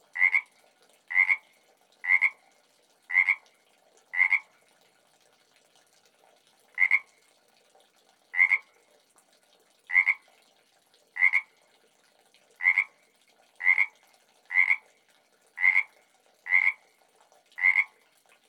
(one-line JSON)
{"title": "SMIP RANCH, D.R.A.P., San Mateo County, CA, USA - Frog at the Old Barn", "date": "2014-06-13 00:15:00", "description": "Frog found in metal tub by the \"Old Barn\"", "latitude": "37.36", "longitude": "-122.29", "altitude": "319", "timezone": "America/Los_Angeles"}